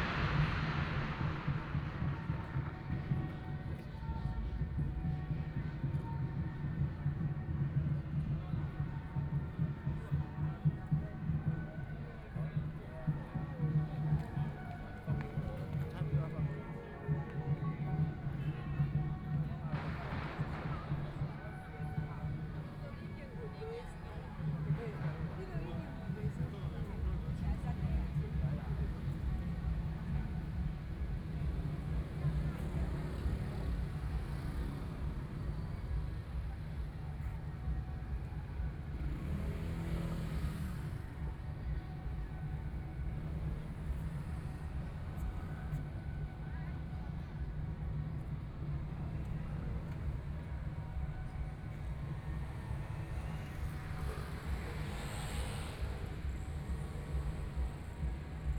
內湖區湖濱里, Taipei City - soundwalk
Traditional Festivals, The sound of firecrackers, Traffic Sound
Please turn up the volume a little. Binaural recordings, Sony PCM D100+ Soundman OKM II